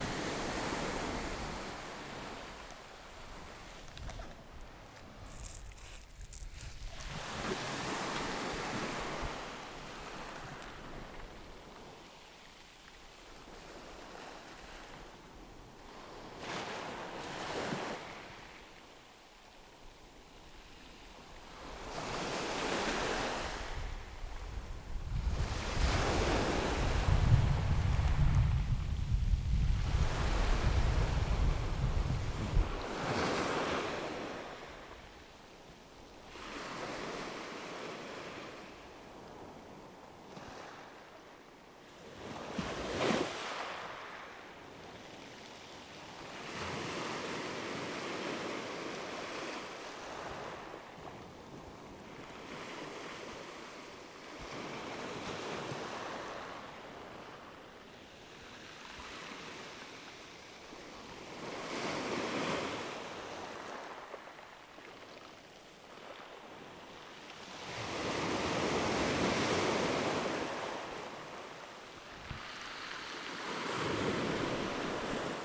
The interaction of the water with the pebbles has been captured in this recording.
16 August 2017, ~23:00